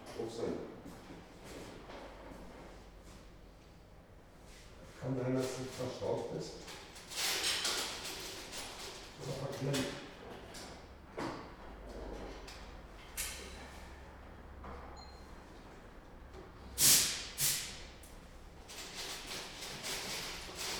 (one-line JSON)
{"title": "berlin, ohlauer str., waschsalon - laundry ambience", "date": "2019-02-28 10:45:00", "description": "Berlin Ohlauer Str, Wachsalon / laundry morning ambience, technicians testing cash machine\n(Zoom H2)", "latitude": "52.49", "longitude": "13.43", "altitude": "40", "timezone": "Europe/Berlin"}